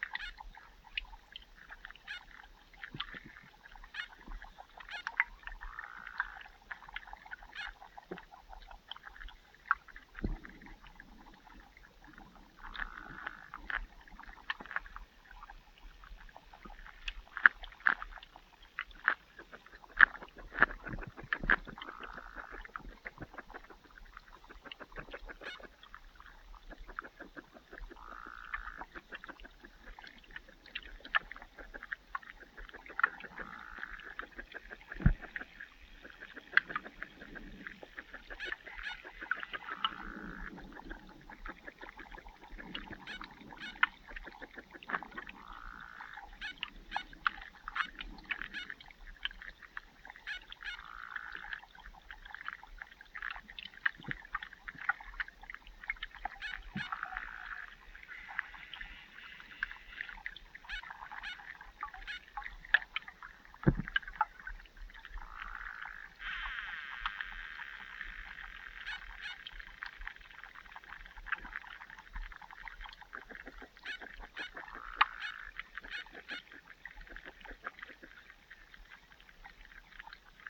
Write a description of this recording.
Hydrophone recording in a pond. Hundreds of tadpoles circling around my underwater mic.